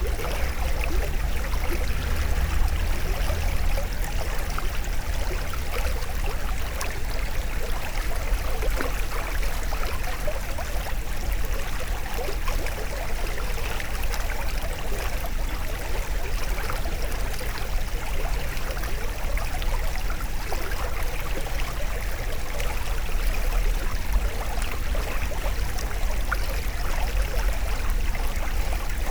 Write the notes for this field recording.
A small river flowing, called the Gambon.